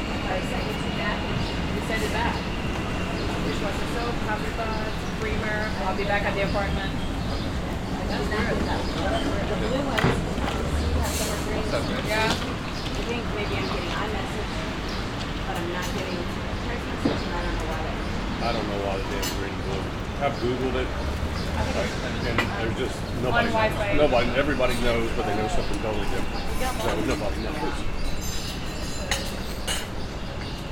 coffee on the square
Captation : ZOOM H6
Euskadi, España